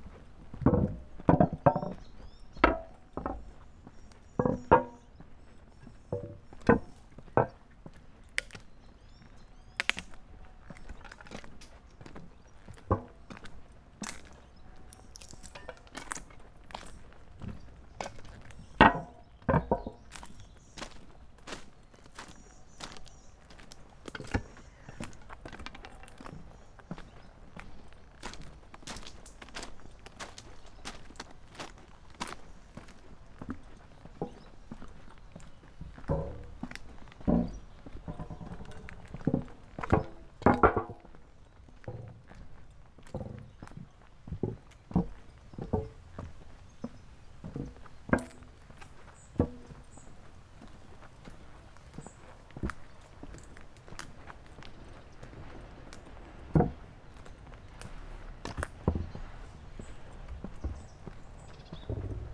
tallinn, kopli, walk along an unused track, on concrete covers over manhole
Tallinn, Estonia, 7 July 2011, 10:10